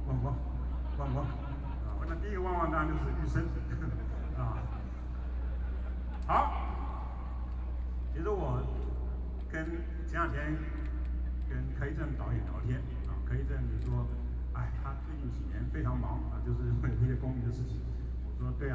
{"title": "Jinan Rd., Taipei City - Protest Speech", "date": "2013-10-10 12:16:00", "description": "Former deputy chief editor of the newspaper, Known writer, Witty way to ridicule the government's incompetence, Binaural recordings, Sony PCM D50 + Soundman OKM II", "latitude": "25.04", "longitude": "121.52", "altitude": "11", "timezone": "Asia/Taipei"}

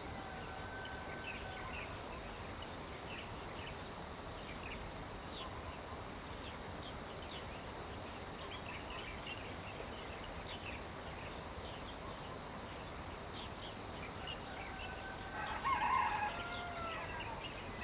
{"title": "南坑一號橋, 埔里鎮成功里 - Birds and Chicken sounds", "date": "2016-07-13 05:31:00", "description": "early morning, Birdsong, Chicken sounds\nZoom H2n MS+XY", "latitude": "23.96", "longitude": "120.89", "altitude": "433", "timezone": "Asia/Taipei"}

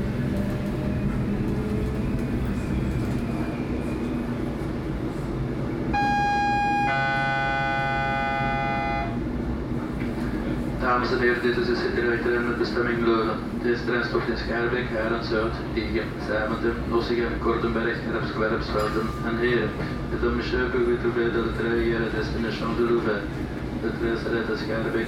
{"title": "Bruxelles, Belgique - Train to Haren", "date": "2012-08-07 12:22:00", "description": "Voices, ambience in the wagon.", "latitude": "50.88", "longitude": "4.40", "altitude": "14", "timezone": "Europe/Brussels"}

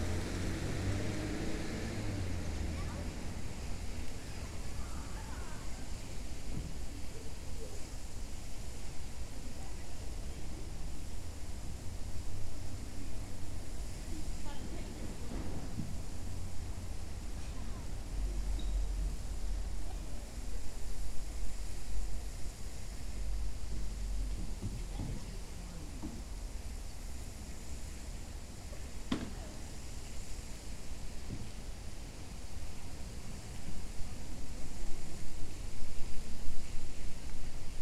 {"title": "Nickajack Rd SE, Mableton, GA - Thompson Park Community Center", "date": "2021-02-07 17:31:00", "description": "A small park with a pond, playground, and picnic tables. Children were playing and a family fed the ducks while the recording took place. A train came by a couple minutes in. You can hear cars, water from the pond to the left, and sparse birdsong.\n[Tascam DR-100mkiii & Primo EM-272 omni mics]", "latitude": "33.84", "longitude": "-84.54", "altitude": "282", "timezone": "America/New_York"}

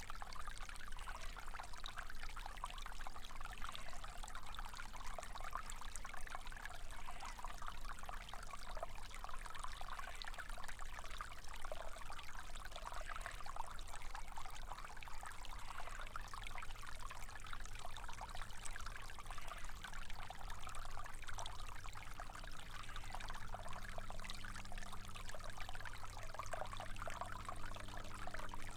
{"title": "Percy Warner Park, Nashville, Tennessee, USA - Stream Percy Warner Park", "date": "2022-03-15 10:04:00", "description": "Recording from stream at Beach Grove Picnic Area in Percy Warner Park", "latitude": "36.07", "longitude": "-86.88", "altitude": "229", "timezone": "America/Chicago"}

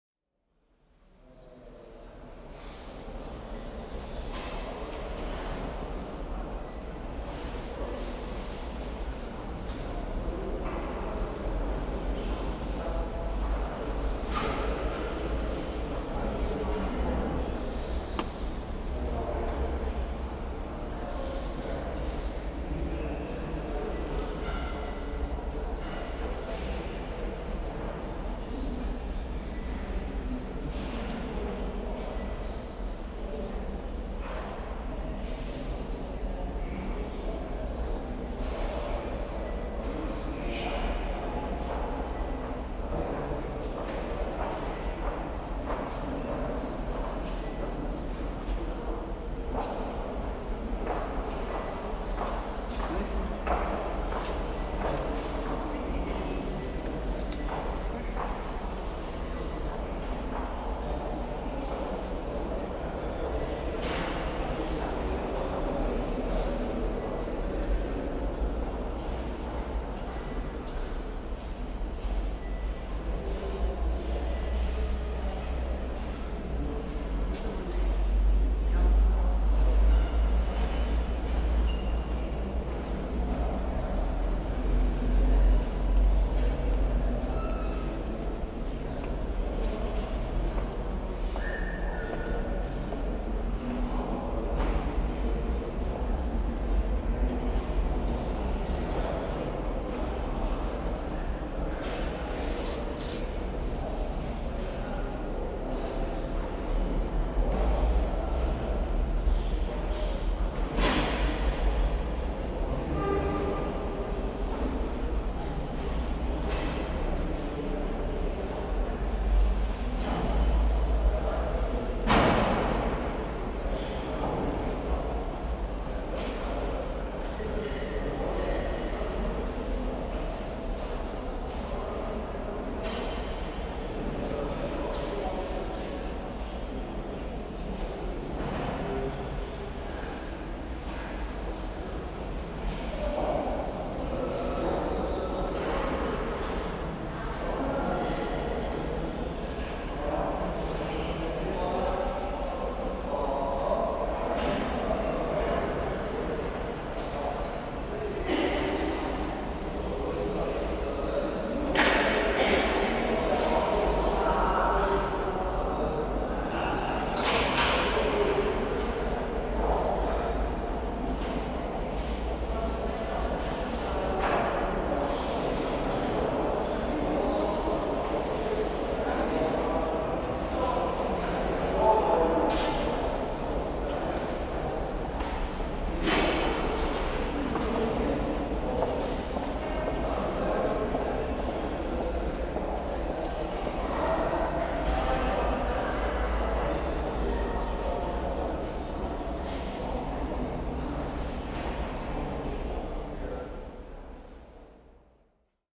{"date": "2009-10-13 16:51:00", "description": "ambient stereo recording in Bath Abbey, October 2009", "latitude": "51.38", "longitude": "-2.36", "altitude": "32", "timezone": "Europe/Tallinn"}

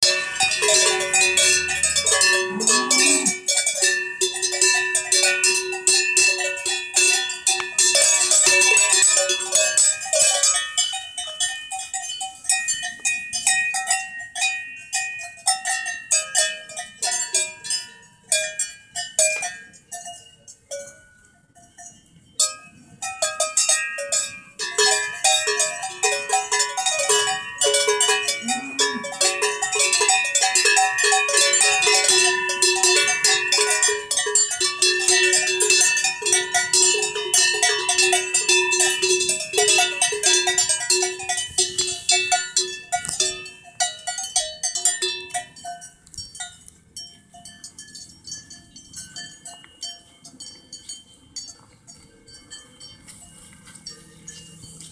{"title": "Grünten, Allgäu (Rettenberg, Deutschland) - kuhglocken (cow bells)", "date": "2013-09-06 16:00:00", "description": "some young cows on the mountain pastures wearing bells to be easily found", "latitude": "47.56", "longitude": "10.32", "altitude": "1428", "timezone": "Europe/Berlin"}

